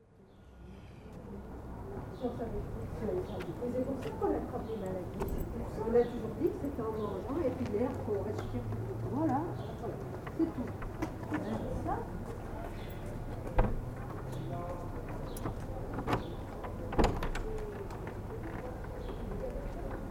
Nant, France - Nant bells
The Nant bells at 12. It's a beautiful medieval village.
1 May